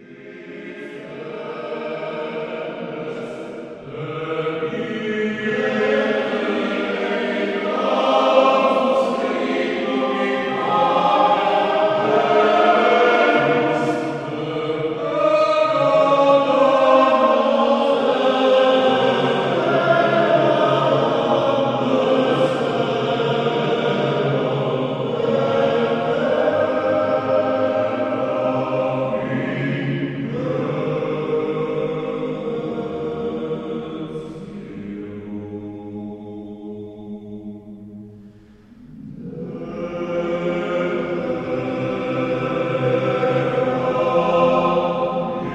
mens choir rehearsal in saint Katarina Church